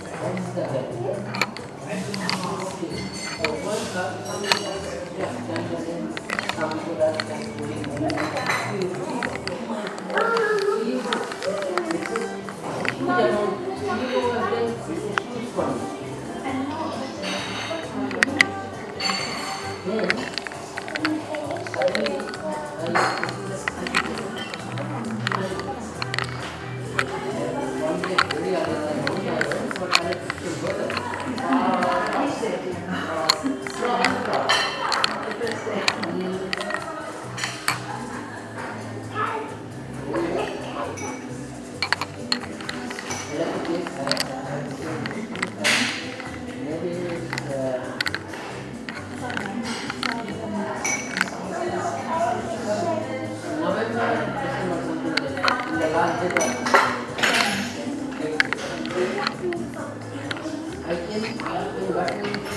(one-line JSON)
{"title": "Cafê Inti, Burgstraße, Göttingen, Germany - Stirring a glass of lemonade with ice", "date": "2020-08-30 16:50:00", "description": "Recorder: SONY IC recorder, ICD-PX333\nstirring lemonade with ice multiple times clockwise 9 sec/length and counterclockwise 9 sec/length", "latitude": "51.53", "longitude": "9.94", "altitude": "157", "timezone": "Europe/Berlin"}